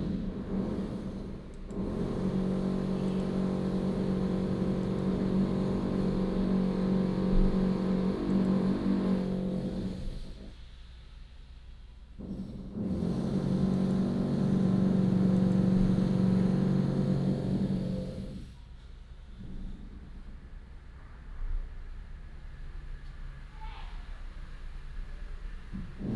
Construction noise, Traffic Sound
New Taipei City, Taiwan, 5 January 2017